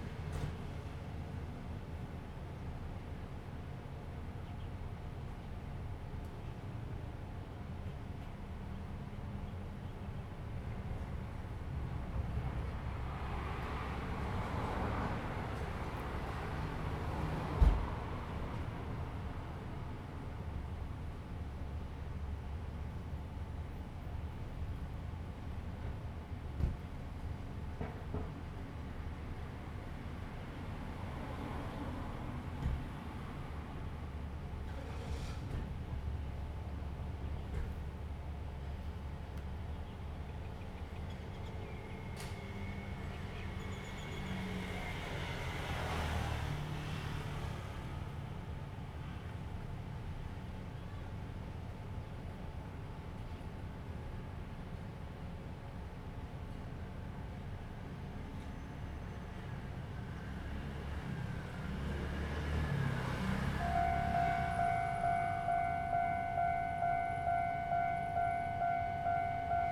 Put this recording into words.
Quiet little town, In the vicinity of the level crossing, Birdsong sound, Traffic Sound, Train traveling through, Very hot weather, Zoom H2n MS+XY